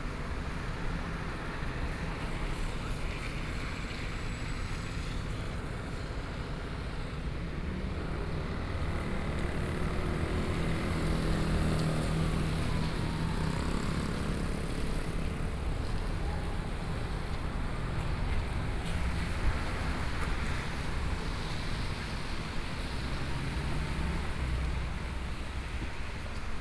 Guangfu Rd., Yilan City - walking in the Street
Traffic Noise, Fire warning sound, Postman messenger sound, Binaural recordings, Zoom H4n+ Soundman OKM II
Yilan County, Taiwan, 5 November 2013, 09:20